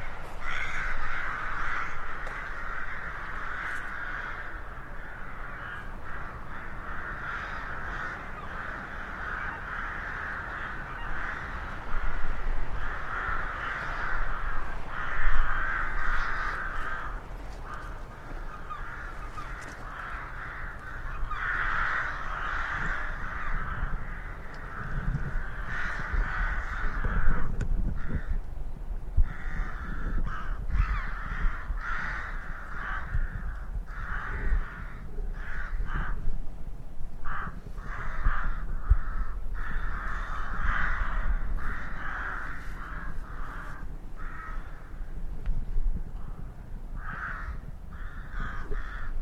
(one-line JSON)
{"date": "2010-01-14 10:57:00", "description": "Crows outside Sutton Pontz pump room", "latitude": "50.65", "longitude": "-2.42", "altitude": "29", "timezone": "Europe/Berlin"}